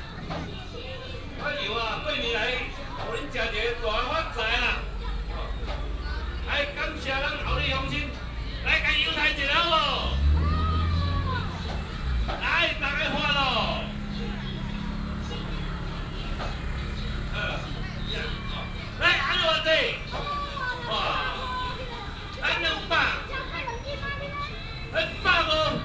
Minsheng Rd., Houli Dist., Taichung City - Selling fish sound
traditional markets, vendors selling sound, Selling fish sound
Taichung City, Taiwan, 22 January